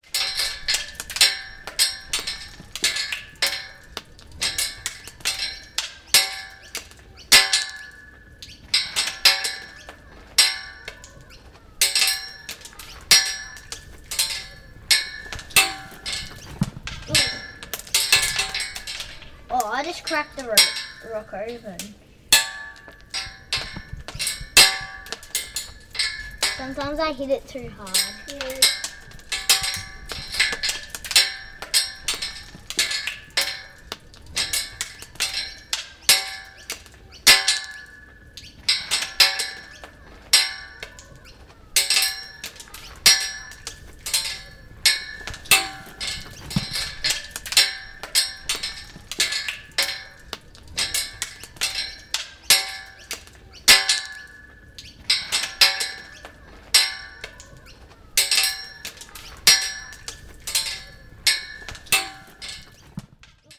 Currumbin Waters, QLD, Australia - Dropping stones into a drain
Children recording themselves dropping & cracking small stones into a drain on a woodland path at school.
Part of an Easter holiday sound workshop run by Gabrielle Fry, teaching children how to use equipment to appreciate and record sounds in familiar surroundings. Recorded using a Rode NTG-2 and Zoom H4N.
This workshop was inspired by the seasonal sound walks project, run by DIVAcontemporary in Dorset, UK.